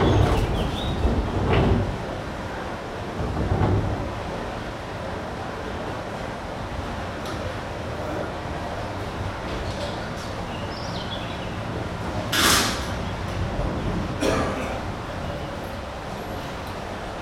Funicular, Fribourg - Funicular in Fribourg: going down from the rear platform (opened)
Going down at the rear of the funicular of Fribourg, recording from the rear platform.
Recorded with a MS Setup Schoeps CCM41 + CCM8 in a Cinela Pianissimo Windscreen
on a Sound Devices 633
Recorded during the Belluard Festival in Fribourg
Fribourg, Switzerland, 29 June 2018, 09:00